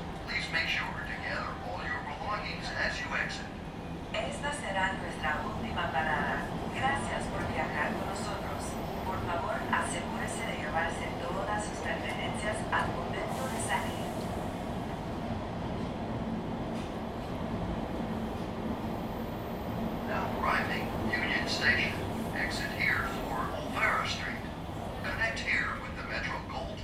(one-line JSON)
{"title": "Civic Center / Little Tokyo, Los Angeles, Kalifornien, USA - LA - underground train ride", "date": "2014-01-24 15:00:00", "description": "LA - underground train ride, red line, arriving at union station, few passengers, announcements;", "latitude": "34.05", "longitude": "-118.25", "timezone": "America/Los_Angeles"}